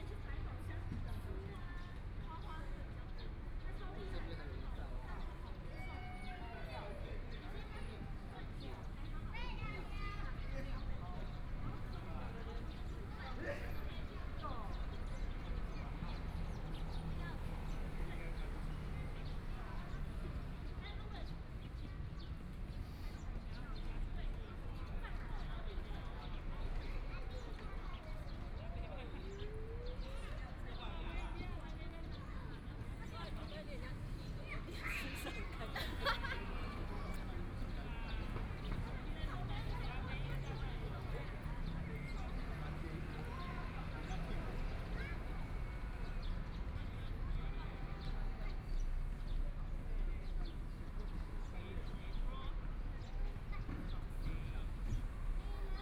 建成公園, Taipei City - in the Park
Afternoon sitting in the park, Traffic Sound, Sunny weather
Please turn up the volume a little
Binaural recordings, Sony PCM D100 + Soundman OKM II